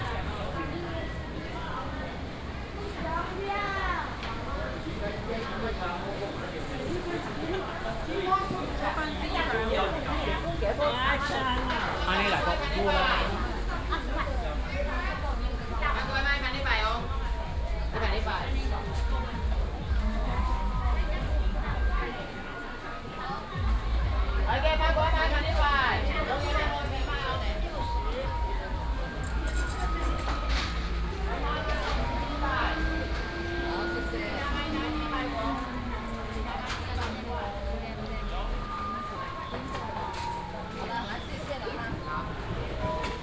Fenglian St., Xinfeng Township - vendors peddling
vendors peddling, Traditional market, The plane flew through